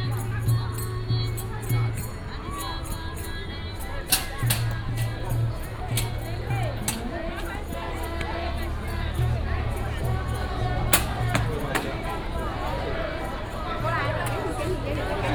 National Library of Public Information, Taichung City - Walking inside and outside the library
Walking inside and outside the library